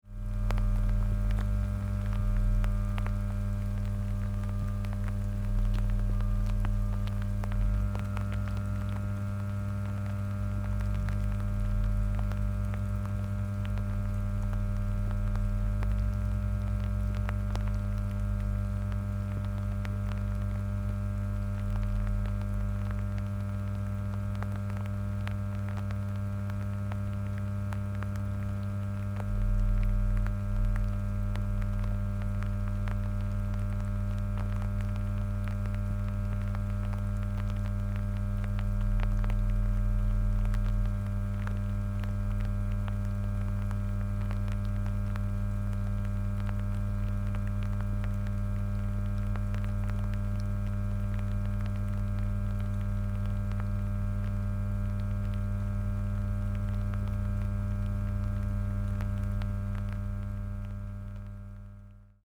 Weißwasser, Germany - Transformer 2– eletrical hum, spattering rain
The small transformer next to the larger oddly makes a deeper hum.
Weißwasser/Oberlausitz, Germany